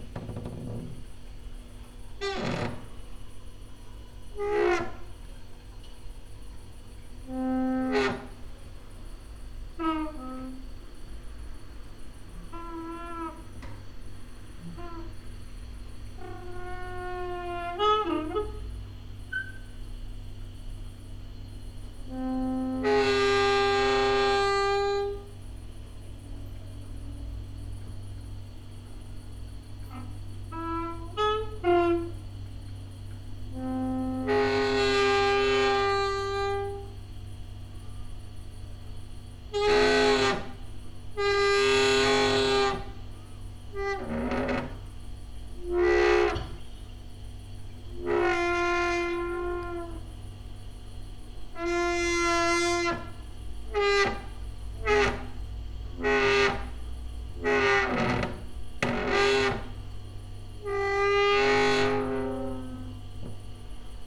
Mladinska, Maribor, Slovenia - late night creaky lullaby for cricket/24
just like doors were not really in the mood ... but cricket did not mind
29 August